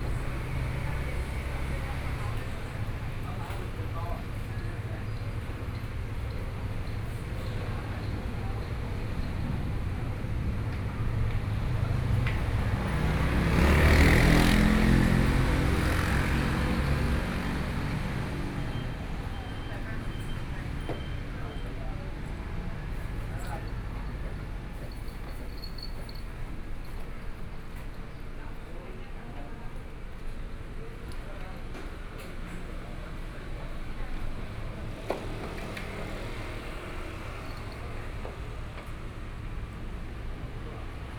In front of the supermarket, Traffic Sound